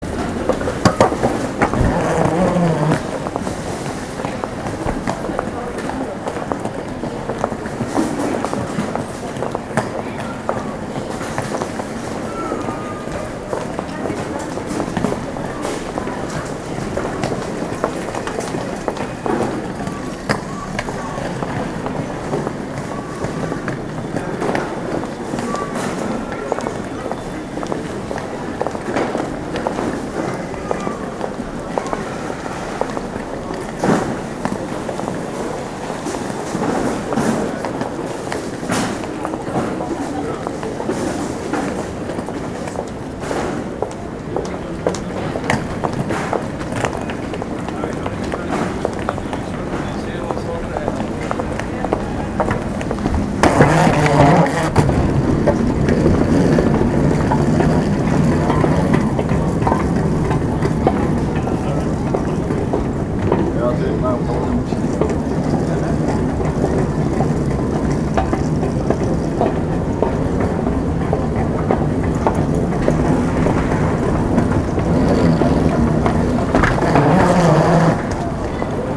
Bruxelles Airport (BRU), Belgium - on the sliding carpets
Brussels airport, passengers arriving at the terminal and carrying trolleys on the sliding carpets connecting the terminal with the departure gates